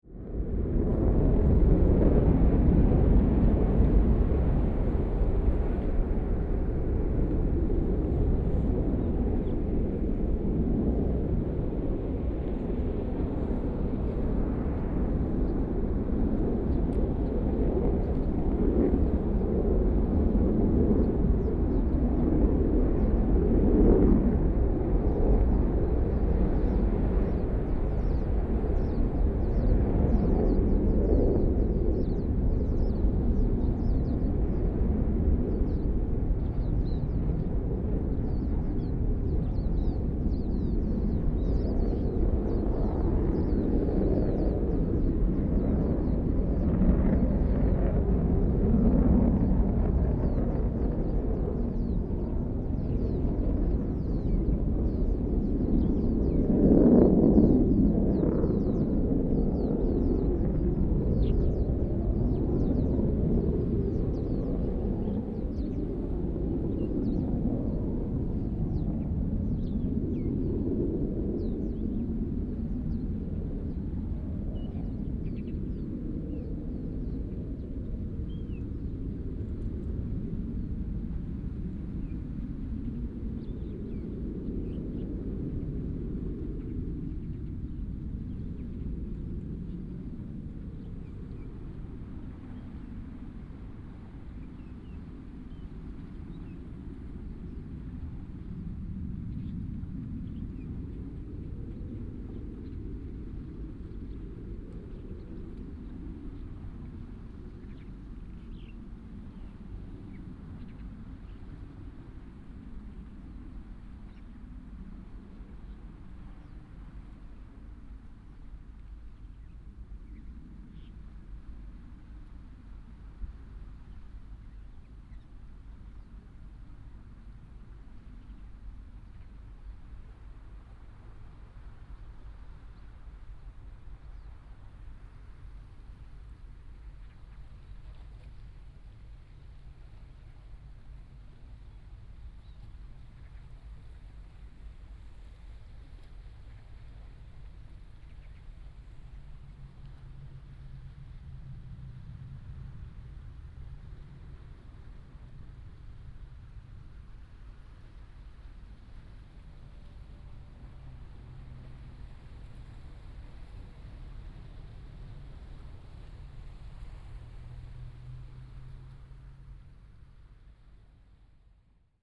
海埔路229巷, Xiangshan Dist., Hsinchu City - On the coast
On the coast, Sound of the waves, Birds sound, Far fighters take off, Binaural recordings, Sony PCM D100+ Soundman OKM II